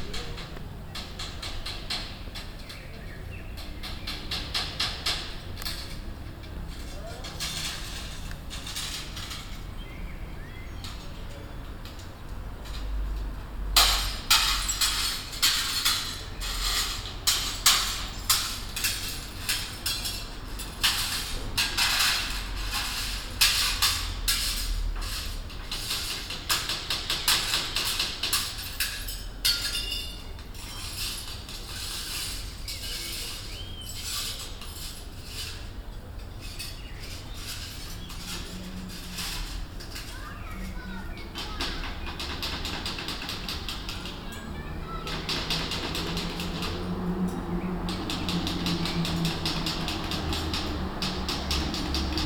Athens. Fixing a balcony baffle - 05.05.2010
Kolonaki, May 2010